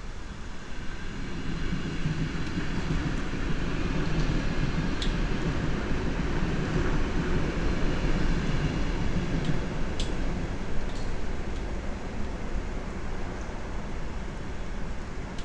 Jūrmala, Latvia, empty sport hall
after a rain. waters dripping on metallic constructions. Majori Sport Hall: Recognition at the Award of Latvian architecture 2007
Nomination for Russian architecture prize Arhip 2009.
Shortlisted at EU prize for Contemporary architecture - Mies Van Der Rohe Award 2009